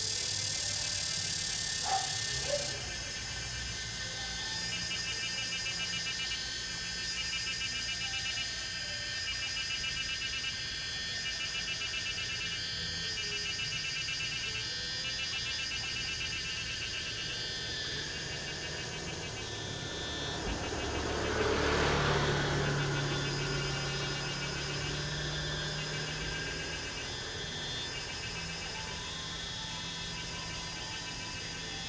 Lane TaoMi, Puli Township - Cicadas cry
Cicadas cry, Dogs barking
Zoom H2n MS+XY
Puli Township, 桃米巷52-12號